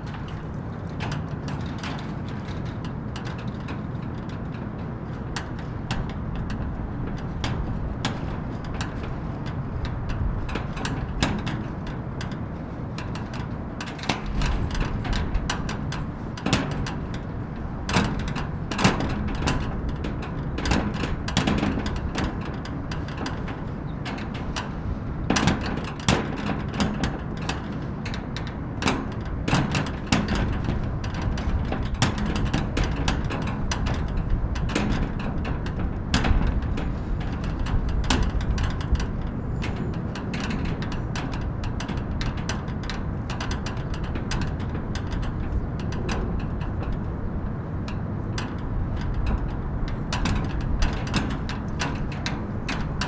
Riverbank State Park - Metal Roll-Down Gate Clanking
A metal roll-down security gate clanking from the wind. Sunny ~75 degrees F. Tascam Portacapture X8, X-Y internal mics, Gutmann windscreen, handheld. Normalized to -23 LUFS using DaVinci Resolve Fairlight.